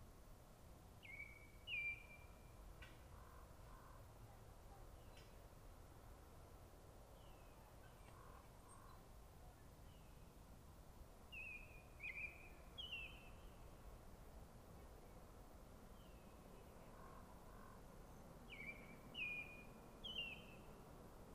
Glorieta, NM, so called USA - GLORIETA summer evening 4
more evening birds...almost time to take the gods for a walk...